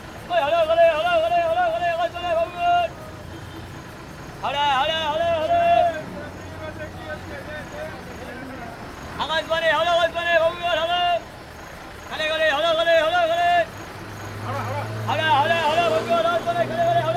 Shaheed Minar, Maidan, Esplanade, Kolkata, West Bengal, Inde - Calcutta - Nehru road
Calcutta - Près de Nehru road
Ambiance urbaine
West Bengal, India, 4 December 2002, ~16:00